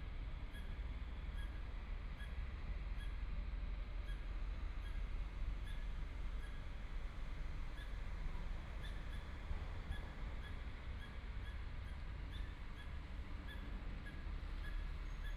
LiaoNing Park, Taipei City - in the Park
in the Park, Cloudy day, Clammy, Distant construction noise, Traffic Sound, Motorcycle Sound, Birds singing, Binaural recordings, Zoom H4n+ Soundman OKM II
Taipei City, Taiwan